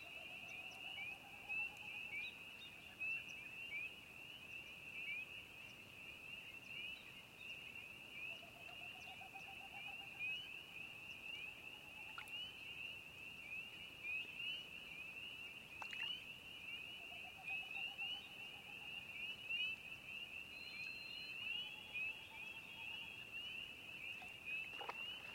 Canada Geese Landing after dark
Canada Geese come out of the dark to fly over our heads and into the lake. You can hear the trout jumping and the winnowing of the Snipe overhead. Location, Gareloach Lake, Pictou Co.
Nova Scotia, Canada